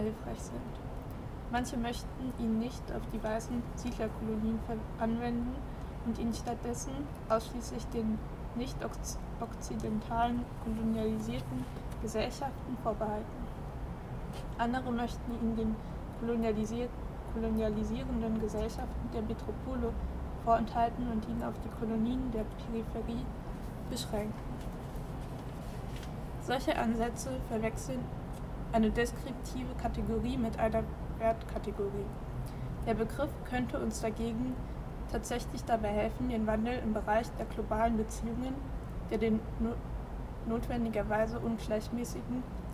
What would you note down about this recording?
The reading group "Lesegruppedololn" reads texts dealing with colonialism and its consequences in public space. The places where the group reads are places of colonial heritage in Berlin. The Text from Stuart Hall „When was postcolonialism? Thinking at the border" was read on the rooftop oft he former „ Afrika Haus“ headquarters of the German Colonial Society.